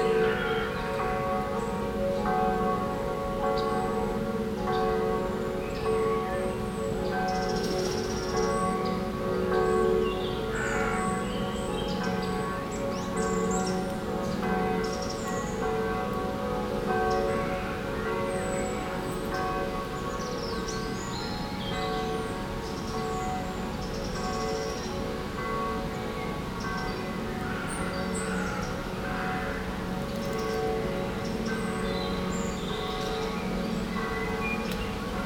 La Hulpe, Belgique - Faraway bells
Into the woods, birds singing, distant noise from the La Hulpe bells and a lot of traffic drones.
La Hulpe, Belgium, May 2017